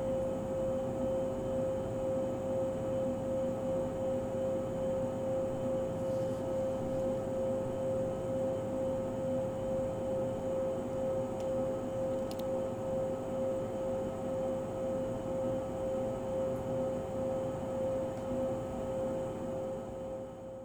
pulsing drone coming from a steel box mounted on a wall in one of the attics of Grand Theater. friend unlocking doors to the balcony. (sony d50)